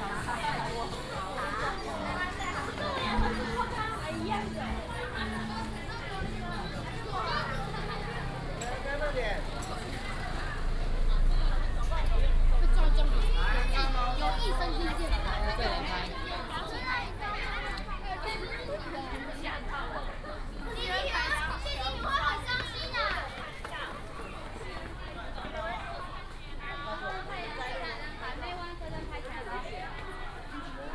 Zhongzheng Rd., Hengshan Township - walking in the Street
Shopping Street, tourist, Many students
Hsinchu County, Taiwan, 17 January 2017